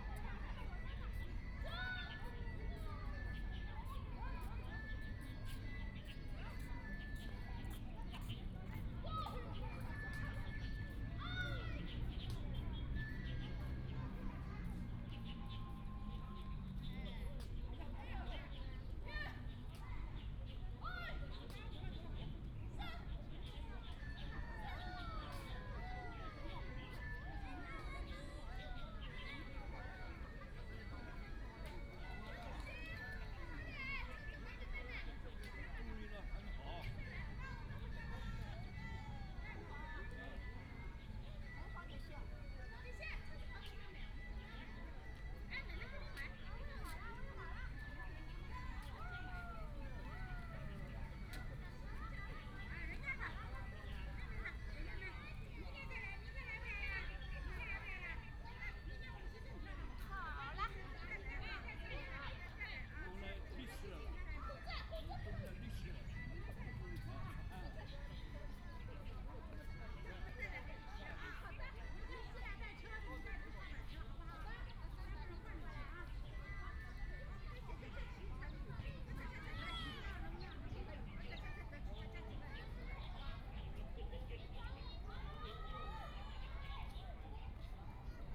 Yangpu Park, Shanghai - In the Square
Woman and child on the square, There erhu sound nearby, Binaural recording, Zoom H6+ Soundman OKM II
Shanghai, China, 26 November 2013